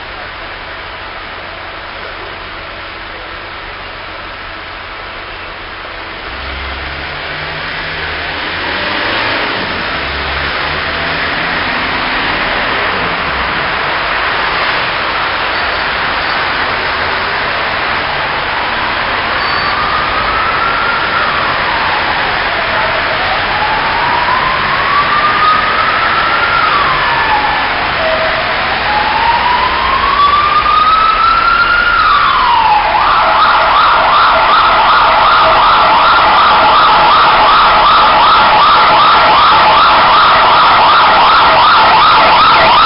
Bucharest, Calea Victorie, Traffic, Sirens
boulevard, traffic, sirens
Bucharest, Romania, 16 November, 22:35